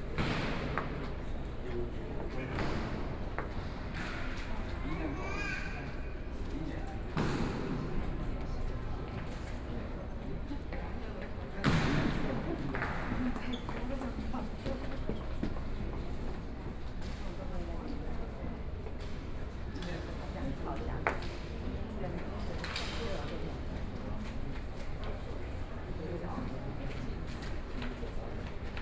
Sun Yat-Sen Memorial Hall - Guard ceremony
In the hall of the Guard ceremony, Sony PCM D50 + Soundman OKM II
Taipei City, Taiwan